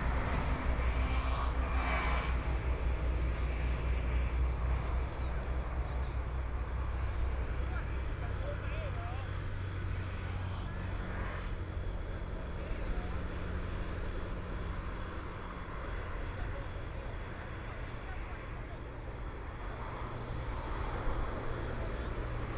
華源村, Taimali Township - rest area
Roadside rest area, Traffic Sound, Sound waves, Train traveling through